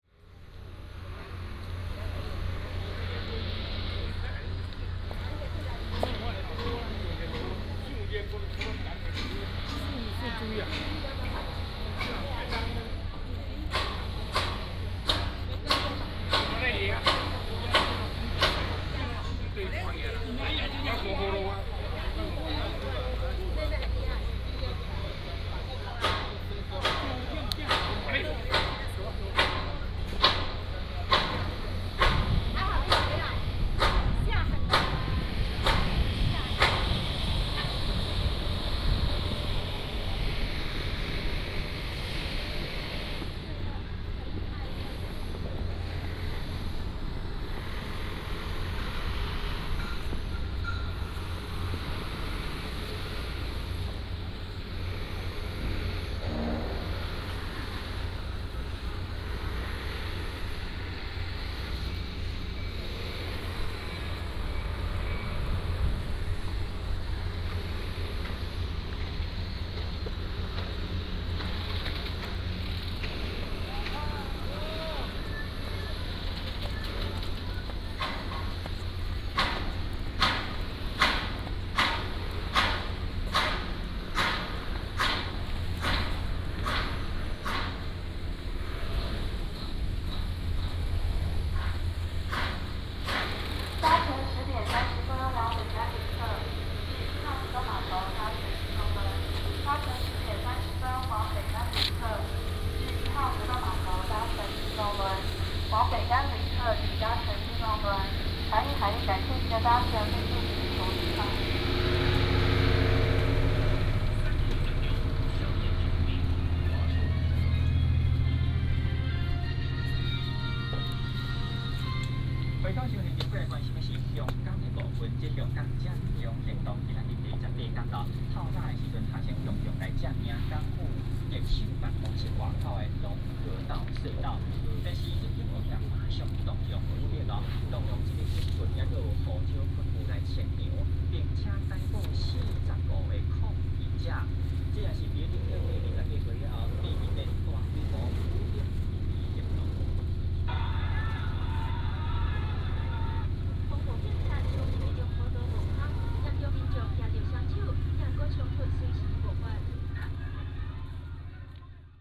福澳碼頭, Nangan Township - walk into the cabin
In the dock, walk into the cabin